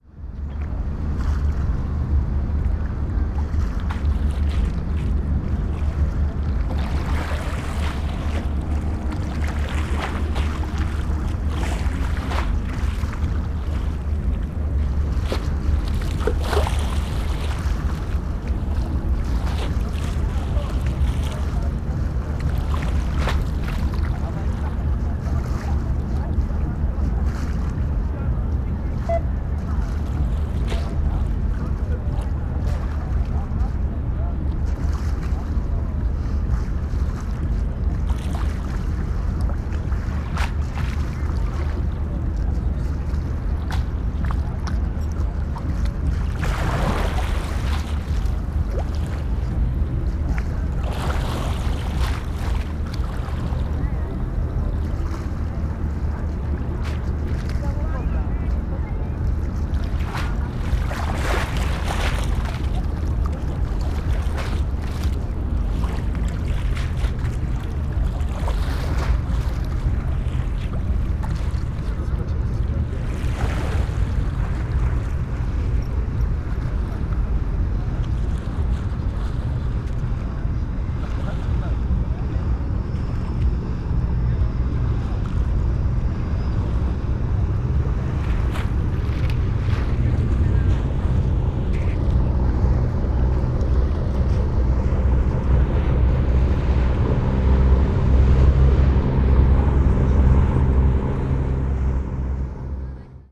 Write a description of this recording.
evening sea and traffic aurality, project "silent spaces"